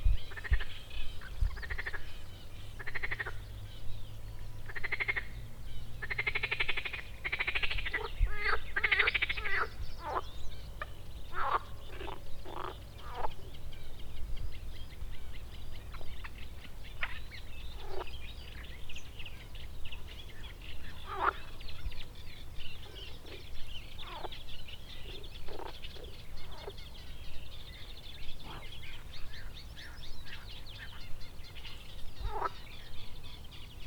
{"title": "Srem, Grzymislawskie Lake, morning frogs", "date": "2010-07-04 04:40:00", "description": "frogs and birds recorded early morning", "latitude": "52.07", "longitude": "17.01", "altitude": "69", "timezone": "Europe/Warsaw"}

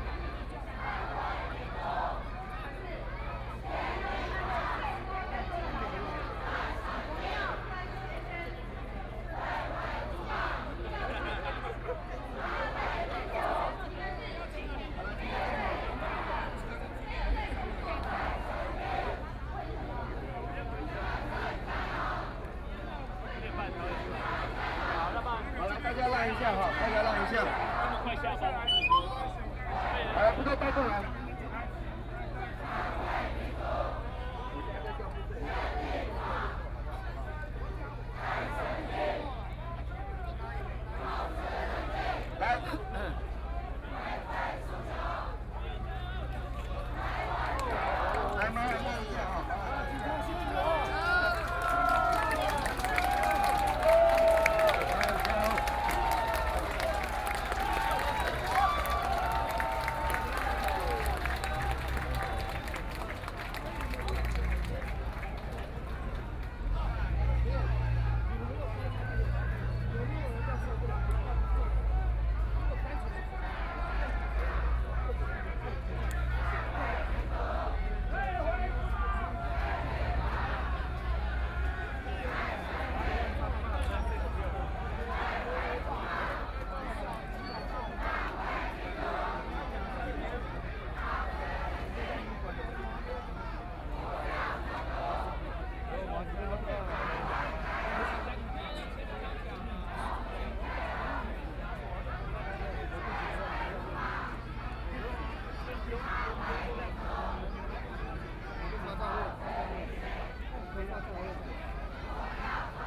{"title": "Zhongxiao E. Rd., Taipei City - Confrontation", "date": "2014-04-01 16:43:00", "description": "Walking around the protest area, Confrontation, Government condone gang of illegal assembly, Who participated in the student movement to counter the cries way", "latitude": "25.05", "longitude": "121.52", "altitude": "11", "timezone": "Asia/Taipei"}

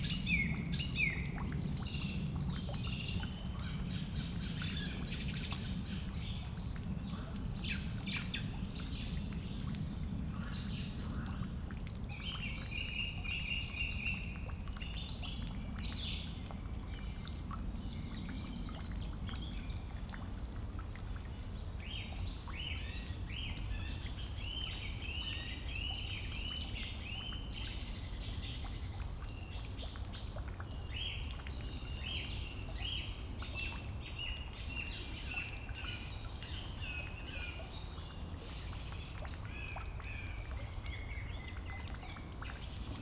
{"title": "Beselich, Niedertiefenbach, Ton - fishpond", "date": "2010-06-02 22:00:00", "description": "Ton (former clay mining area), little fishpond, dripping drain, evening birds, planes crossing, almost night", "latitude": "50.45", "longitude": "8.15", "altitude": "251", "timezone": "Europe/Berlin"}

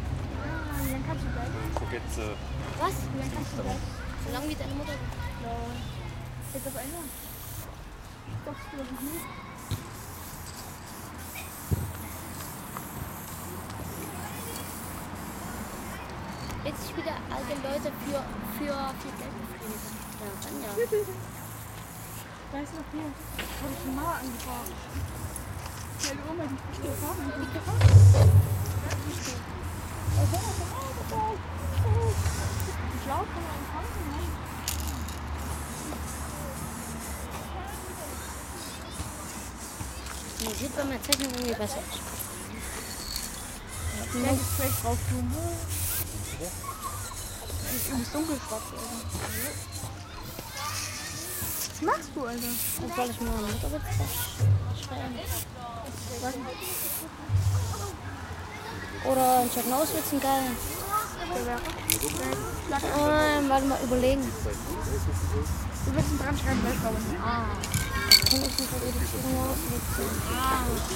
{"title": "gotha, kjz big palais, beim graffitiprojekt - beim graffitiprojekt", "date": "2012-08-08 16:06:00", "description": "das graffitiprojekt übt, im hintergrund verkehr, der bolzplatz und ein großer spielplatz. dosen, caps, schütteln, sprühen...", "latitude": "50.94", "longitude": "10.70", "altitude": "313", "timezone": "Europe/Berlin"}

